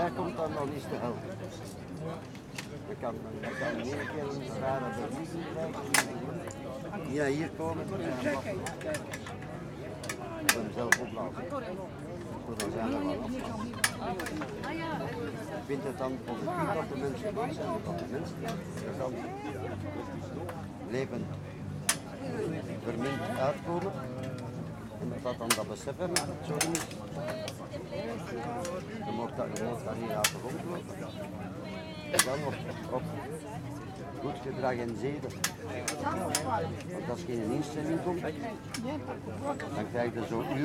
Place de l'Albertine, Bruxelles, Belgique - Ambience before ceremony for the homeless who died on the streets.
A tree was planted 12 years ago to honor the homeless who died on the streets.
Guitar player, conversations.
Un arbre a été planté il y a 12 ans pour rendre hommage aux morts de la rue.
Chaque année la liste de tous ceux qui sont morts dans la rue est lue ici.
Tech Note : Olympus LS5 internal microphones.
Région de Bruxelles-Capitale - Brussels Hoofdstedelijk Gewest, België / Belgique / Belgien, 25 May 2022, ~14:00